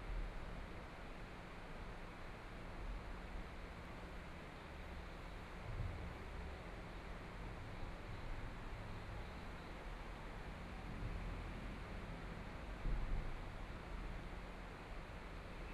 Zhongzheng Bridge, Hualien City - In the bottom of the bridge
In the bottom of the bridge
Binaural recordings
Zoom H4n+ Soundman OKM II
Hualien County, Taiwan, 24 February 2014, 12:38